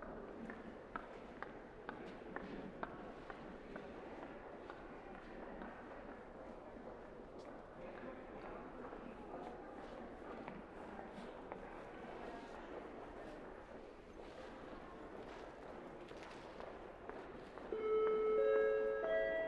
Moscow, Underground station Ploshad Revolyutsii - People and Trains Traffic
Underground, People, Train